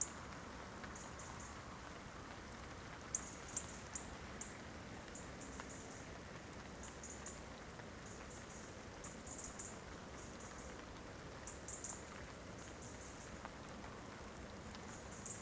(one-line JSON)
{"title": "Po River, Calendasco (PC), Italy - walking into mud", "date": "2012-10-30 17:32:00", "description": "light rain, dark sky at dusk, stading under trees, then walking on muddy terrain.", "latitude": "45.10", "longitude": "9.57", "altitude": "53", "timezone": "Europe/Rome"}